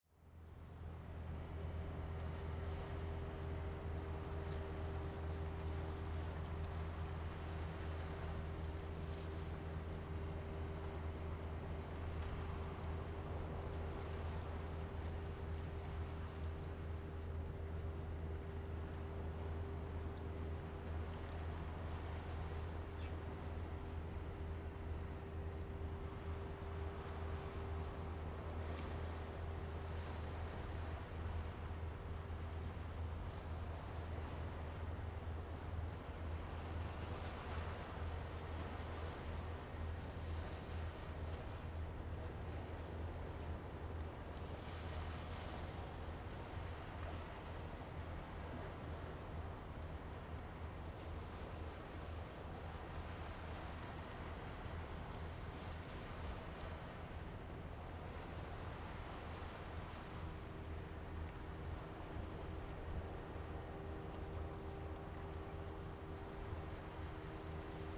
Small hill, Sound of the waves, Fishing boats in the distance
Zoom H2n MS +XY
Huxi Township, Penghu County, Taiwan, 21 October 2014